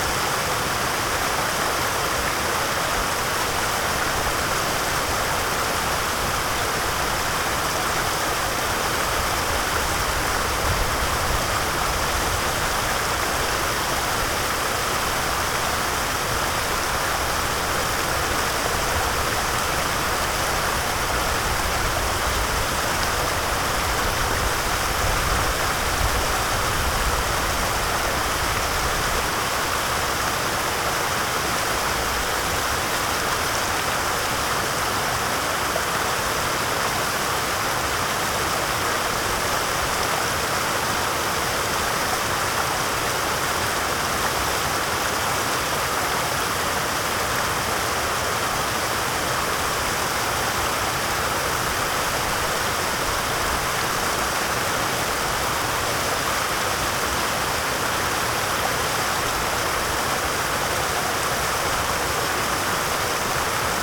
Running water, distant hikers
Cours d’eau, randonneurs lointains
Campan, France, 31 December 2017